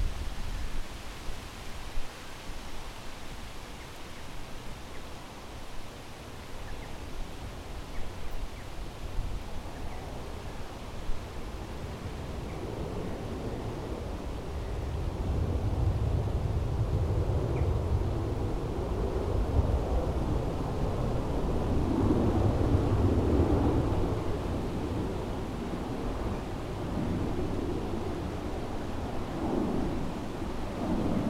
Kampenhout, Belgique - Un chemin campagnard aligné sur un aéroport
Balayée par le vent, la Schoonstraat (Kampenhout) s'accorde parfois sur le son d'un avion
Matériels utilisés : Zoom H6 + Micro Omni
Temps : Clair, ensoleillé
Kampenhout, Belgium, 29 September 2015